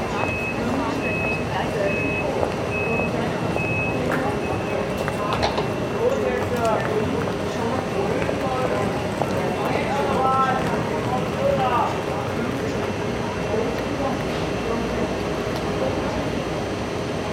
Standort: Gleis 7. Blick Richtung Gleis.
Kurzbeschreibung: Geräuschkulisse des Bahnhofs, Ansage, Einfahrt ICE, Fahrgäste, Abfahrt ICE.
Field Recording für die Publikation von Gerhard Paul, Ralph Schock (Hg.) (2013): Sound des Jahrhunderts. Geräusche, Töne, Stimmen - 1889 bis heute (Buch, DVD). Bonn: Bundeszentrale für politische Bildung. ISBN: 978-3-8389-7096-7